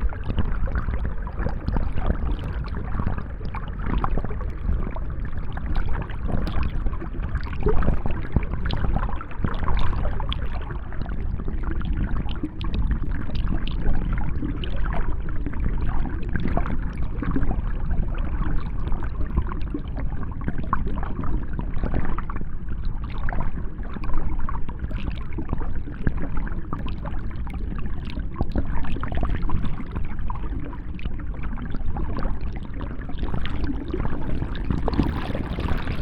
Recording of the river Orne, in a pastoral scenery.
Recorded underwater with a DIY hydrophone.
Walhain, Belgium